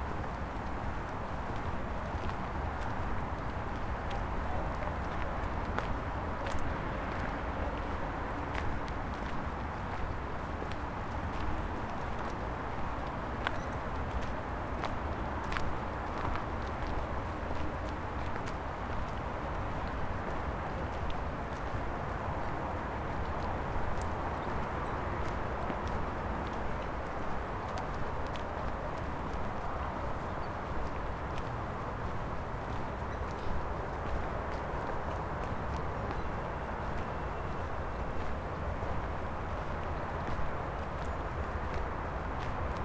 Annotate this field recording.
Passeio sonoro entre o Parque do Calhau e o Bairro da Serafina, em Lisboa.